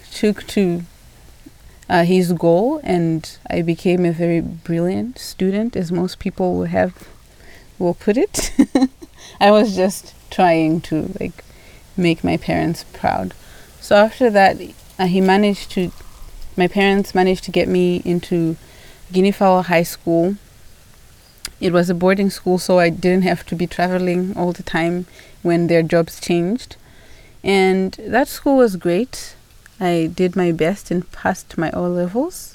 in the grounds of Tusimpe Mission, Binga - i am a girl from Binga...
...we are sitting with Chiza Mwiinde in front of a large hut on the grounds of Tusimpe Catholic Mission the thatched roof offers a nice shade to linger and hides us just about enough from a strong wind which is – as you’ll hear – playing wildly with the dry leaves and bushes around Chiza was born in Binga, a place at the back of beyond as some say, and is now studying geo-sciences at Smith College in the US. We worked together at the local womens organisation Zubo Trust, Chiza as an Intern, me as a multimedia volunteer. I was intrigued by her art of storytelling, especially about rocks, her research so I enticed her into this long interview to share her story with us, her journey as a girl from rural Binga becoming a woman geo-scientist .
Binga, Zimbabwe, 31 July 2016, 16:17